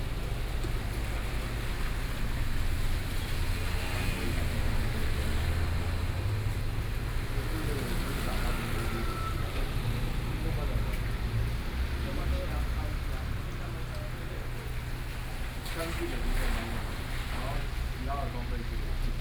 In the convenience store door, Rainy Day, Voice traffic on the street, Voice conversations between young people, Binaural recordings, Zoom H4n+ Soundman OKM II
Yilan County, Taiwan, 5 November, 08:41